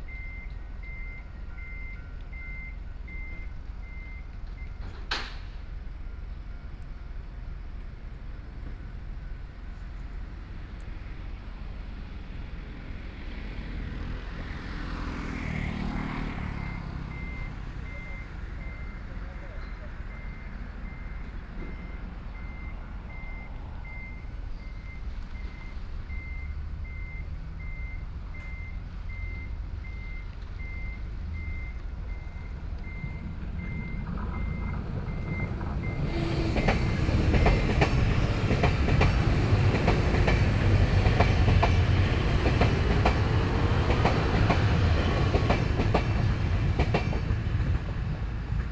Nongquan Rd., Yilan City - Trains traveling through
Traffic Sound, Trains traveling through, Next to the railway
Sony PCM D50+ Soundman OKM II
Yilan City, Yilan County, Taiwan, July 2014